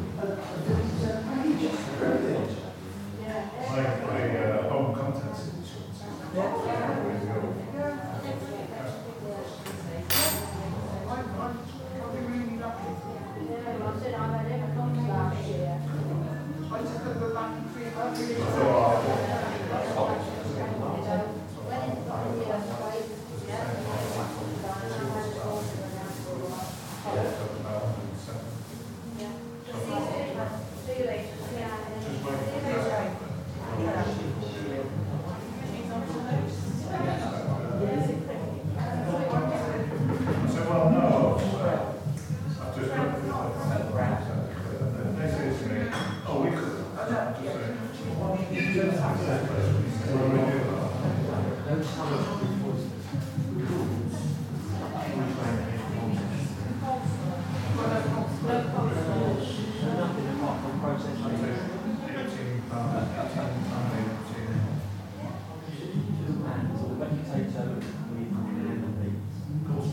white stones cafe - White Stones Cafe - retracing Joe's footsteps around Portland
This was a destination I especially wanted to visit because fellow aporee comrade Joe Stevens made a recording here. I have a personal project that involves recording the sounds of Portland and I sought some guidance in this matter within Joe's catalogue of aporee uploads. I have added in some places of my own on this trip, but Joe's recordings have been a kind of compass, a starting point from which to enter into the sonic textures of the island. Joe was known to many in our community and sadly passed away last year... I like remembering him in the places where he went to make recordings and sitting in the same places where he went. I like to think that he also sat and drank coffee and listened to the tinny little speakers, the boomy acoustics, the traffic outside, the milk frother hissing, the change in the till at White Stones Cafe.